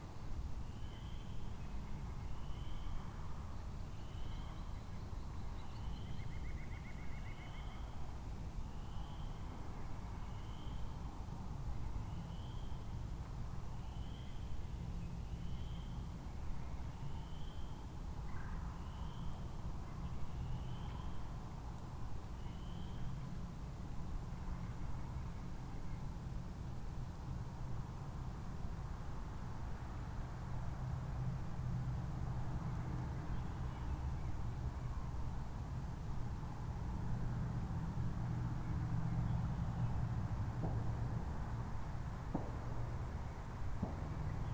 Paved bike trail, Ouabache State Park, Bluffton, IN, USA - Insects and cars
Insects and cars along the paved bike trail, Ouabache State Park, Bluffton, IN
13 April